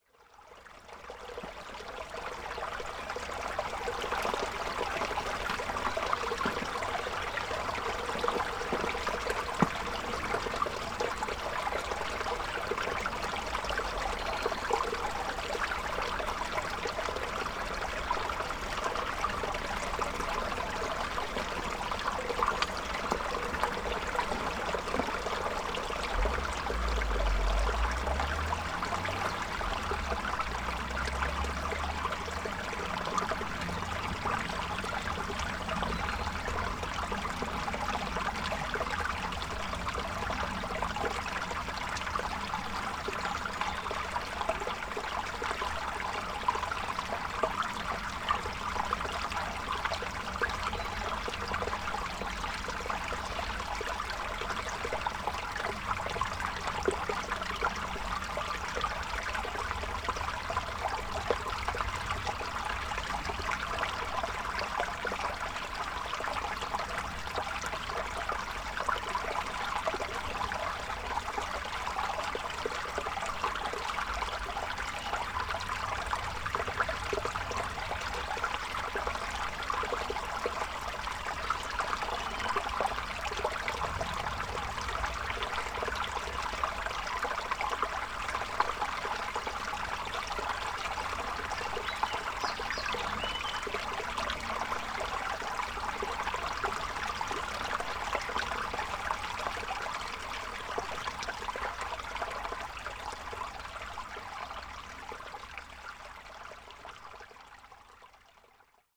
wermelskirchen, aschenberg: sellscheider bach - the city, the country & me: creek
the city, the country & me: may 7, 2011
Wermelskirchen, Germany, 7 May